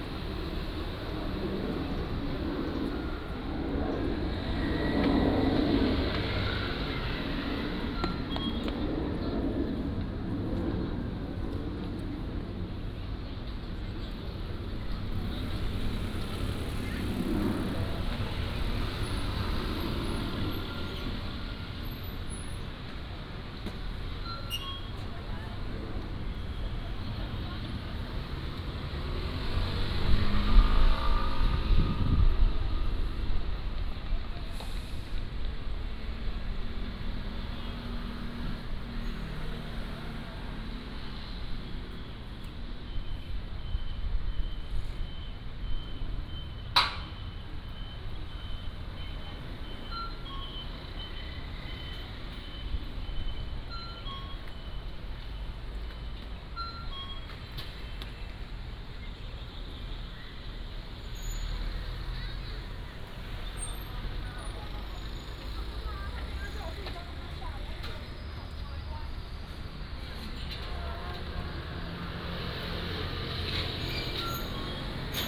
Fuxing Rd., Jinhu Township - next to the station
next to the station, Aircraft flying through, Traffic Sound
金門縣 (Kinmen), 福建省, Mainland - Taiwan Border, 4 November, 17:00